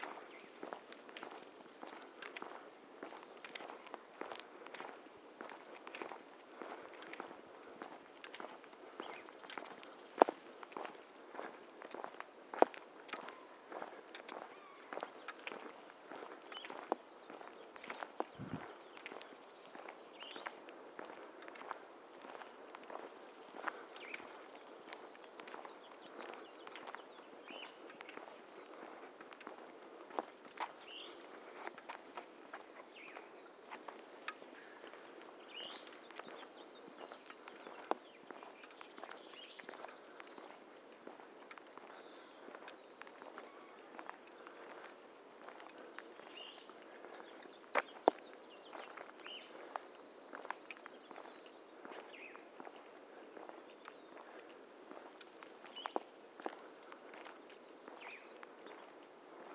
Walking on the road to a trailhead with a few other people. Recorded on Zoom H4 with binaural mics attached to my sunglasses.